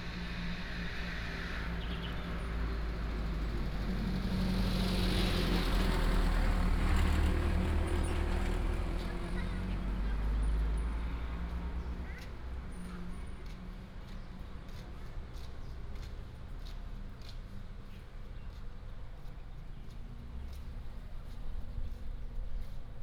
In aboriginal tribal streets, Traffic sound, Bird cry, Old man and footsteps